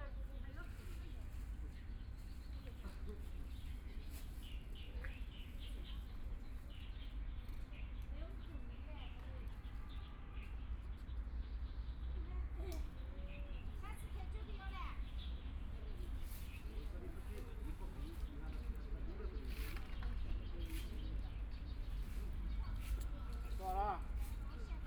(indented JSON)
{
  "title": "Yangpu Park, Shanghai - Sitting in the square",
  "date": "2013-11-26 12:04:00",
  "description": "Sitting in the square, A group of people who are eating and playing cards, Binaural recording, Zoom H6+ Soundman OKM II",
  "latitude": "31.28",
  "longitude": "121.53",
  "altitude": "3",
  "timezone": "Asia/Shanghai"
}